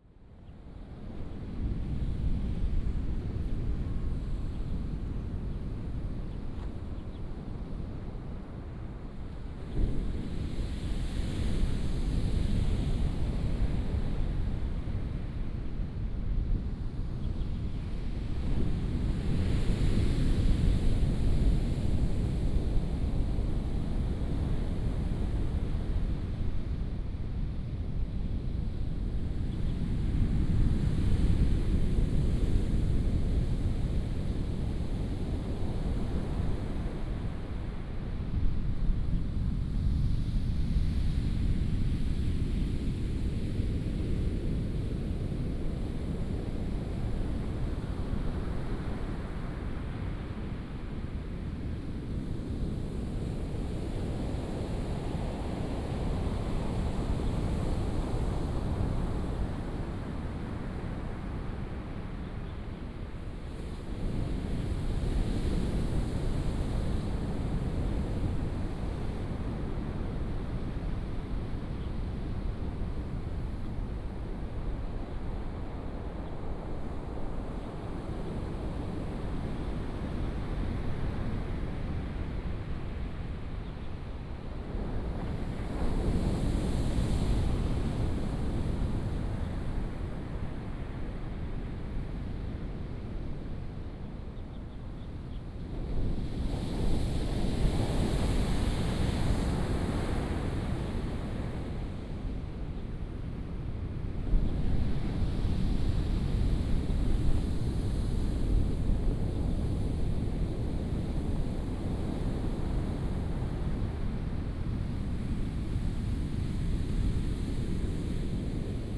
舊香蘭, Jiuxianglan, Taimali Township - At the beach
At the beach, Sound of the waves
Binaural recordings, Sony PCM D100+ Soundman OKM II